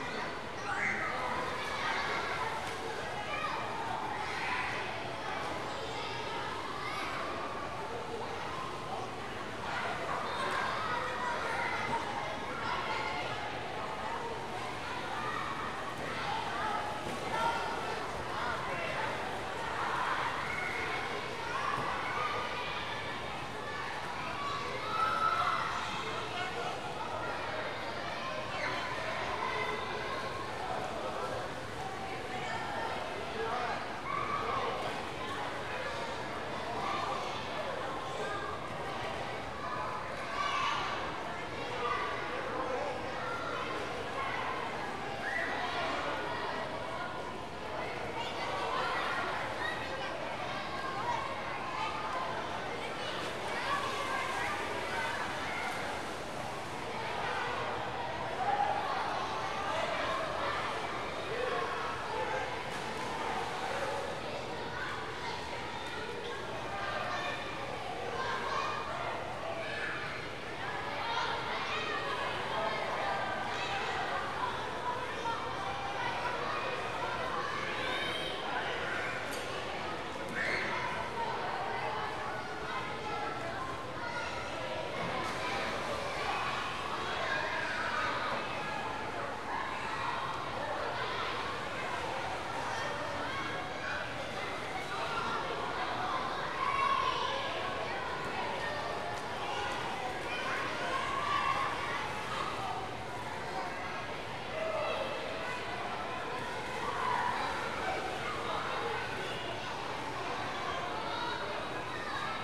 Mountlake Terrace Pool - Swimming Pool
A popular community pool is packed on the first sunny day of spring vacation.
Major elements:
* Kids yelling, running, playing, splashing, jumping in
* Lifeguards trying to keep order
* Diving board
* Water basketball game
* Parents in the water & on deck
* The whoosh of the air circulation system
Washington, United States of America, 17 April 1999